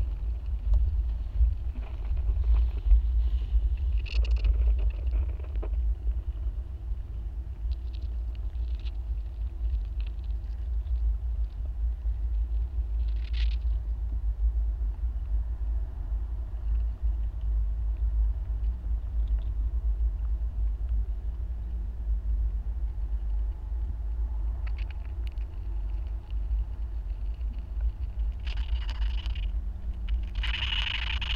recorded some big structure with contact mics and there were ants walking everywhere...and they...scream
Utena, Lithuania, 17 July 2018, 19:05